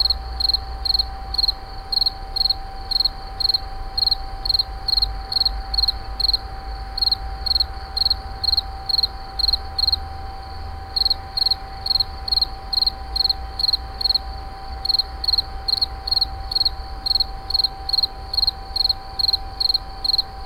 France, Auvergne, Insect, WWTP, Night, Binaural